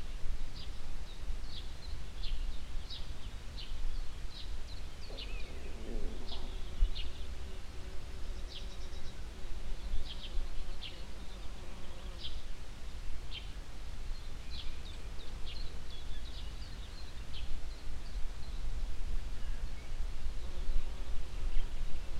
Aukštadvario seniūnija, Litauen - Lithuania, farm house, morning time
At a farmhouse in the Lithuanian countryside in the morning time. The sounds of bird communication, a mellow morning wind coming uphill from the fields, humble bees in a nearby tree passing by - no cars, no engines
international sound ambiences - topographic field recordings and social ambiences